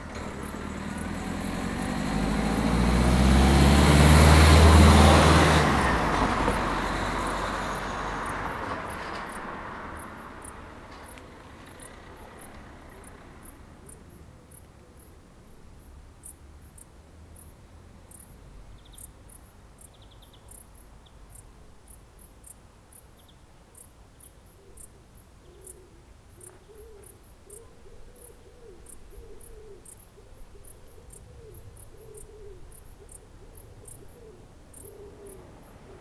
Colchester, Colchester, Essex, UK - Crickets in the Bushes
Sounds of crickets, cars, this was made on route to a site of interest I wanted to record.